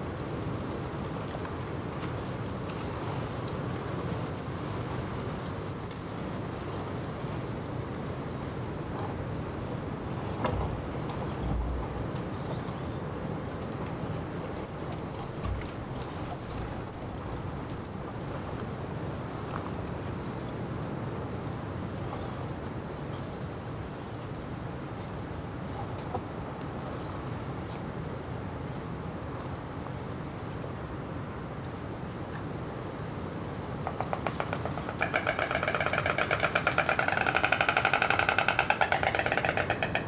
La Rosière, Saint-Fromond, France - The storks of the Chateau de la Rivière
Rather difficult weather conditions and hard to approach damsels but above all a very good time to observe these peaceful storks in their nests on the remains of the Chateau de la Rivière.
Mono.
An old AKG C568EB.
TAscam DR100MK3.
France métropolitaine, France, 2 April 2021, 17:00